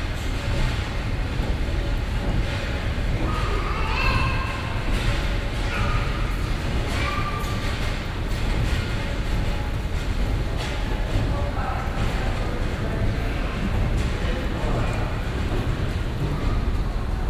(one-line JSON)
{"title": "vancouver, west hastings, simon fraser university, moving stair", "description": "in the simon fraser university, first floor, the steady sound of the moving stairs, some people coming upwards\nsoundmap international\nsocial ambiences/ listen to the people - in & outdoor nearfield recordings", "latitude": "49.28", "longitude": "-123.11", "altitude": "34", "timezone": "GMT+1"}